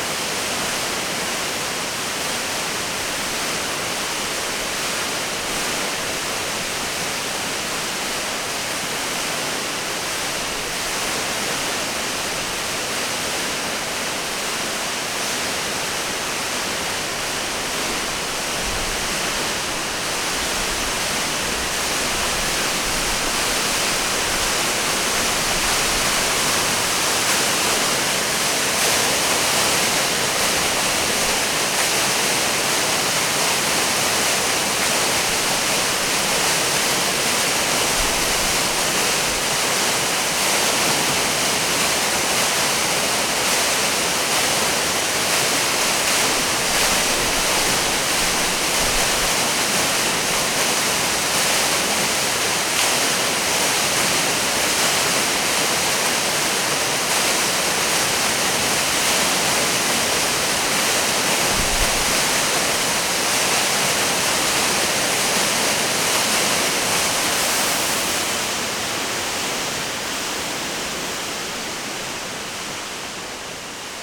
La cascade dans la grotte des Buttes Chaumont
14 mètres de large.
20 mètres de haut.
Décorée de stalactites dont les plus grandes atteignent 8 mètres.
6 July 2009, 22:42